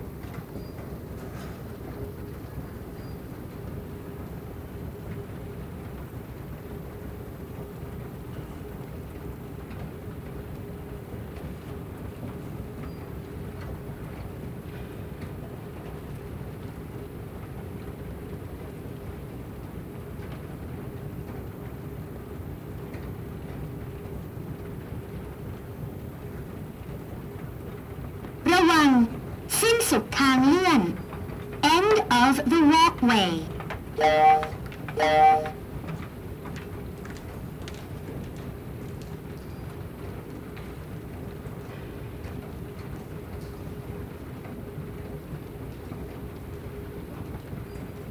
Bangkok Airport - End of the walkway (announce at Bangkok Airport)
An automatic voice announce the end of the walkway. Recorded by a Smart Headset AMBEO Sennheiser very cloe to the speaker (close to the ground).
Chang Wat Samut Prakan, Thailand, 26 September